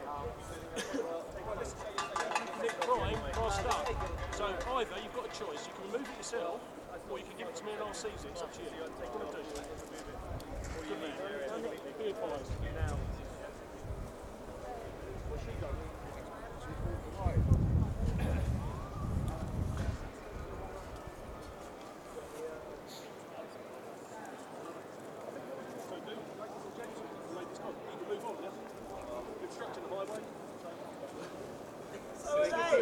G20 meltdown protest police barricade